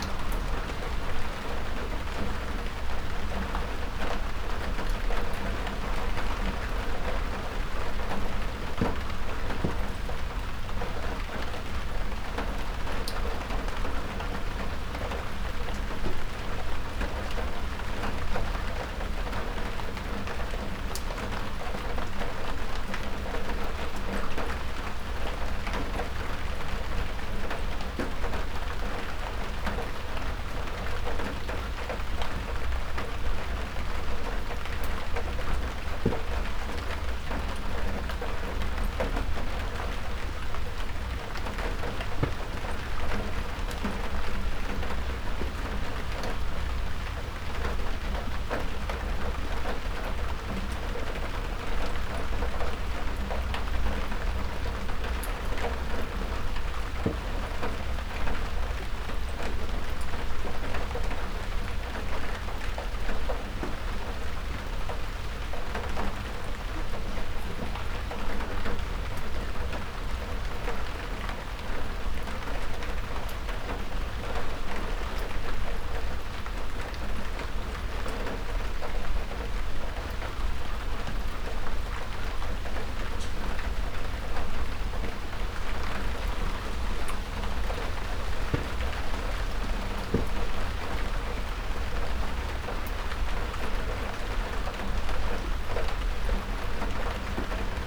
Malvern Wells, Worcestershire, UK - Rain
Early on the morning of the longest day it rained after a long dry spell.
MixPre 6 II with 2 x Sennheiser MKH 8020s.